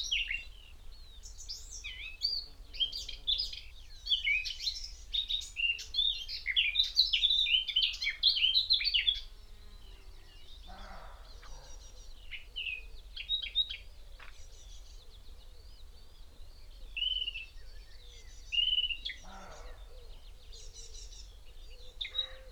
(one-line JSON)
{"title": "Malton, UK - blackcap ... roedeer ...", "date": "2021-06-27 06:52:00", "description": "blackcap ... roe deer ... bird song ... calls ... from skylark ... wood pigeon ... whitethroat ... great tit ... crow ... from extended unattended time edited recording ... sass on tripod to zoom h5 ..", "latitude": "54.14", "longitude": "-0.55", "altitude": "126", "timezone": "Europe/London"}